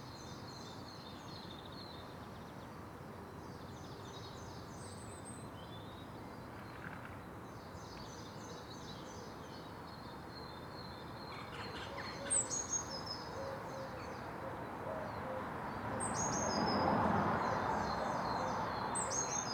Contención Island Day 74 outer west - Walking to the sounds of Contención Island Day 74 Friday March 19th
The Drive Westfield Drive Fernville Road Kenton Road Montague Avenue Wilson Gardens
Rotted gatepost
front wall pointing gone
dunnock singing
Electric van
delivering brown parcels
that are green
Gull cry ‘daw chack
bin thump
finch and electric van wheeze